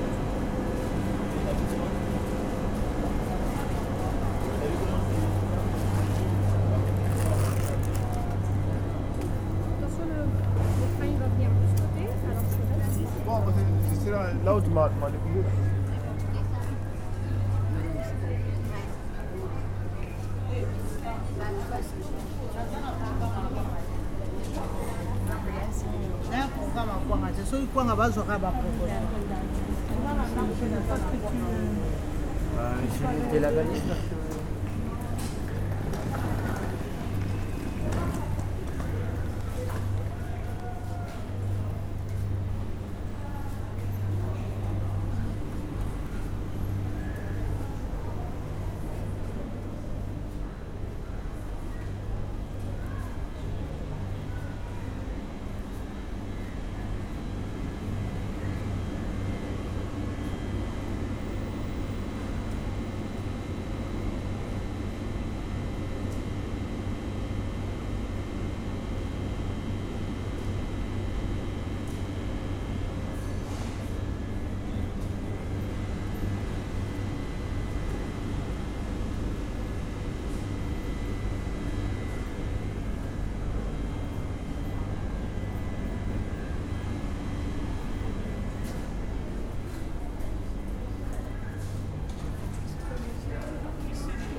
Rouen, France - Rouen station
A trip into the Rouen station, on a saturday afternoon, and taking the train to Paris.